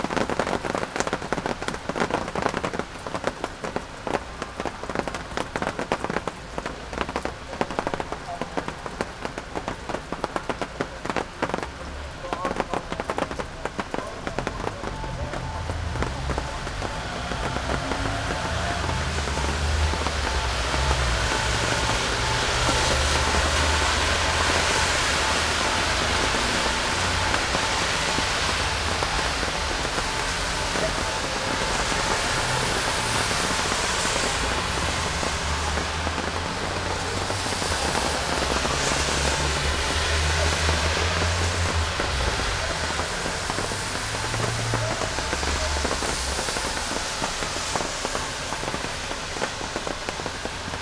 old blue plastick roof, Partizanska cesta, Maribor - rain
after heavy grey clouds were hanging above the city from morning onwards, evening brought rain
Maribor, Slovenia, 19 August 2015, 19:33